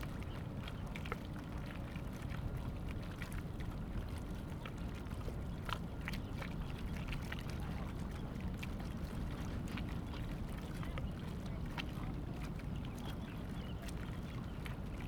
興達港遊艇碼頭, Qieding Dist., Kaohsiung City - At the marina
At the marina, Plane flying through, Bird call, Sound of the waves
Zoom H2n MS+XY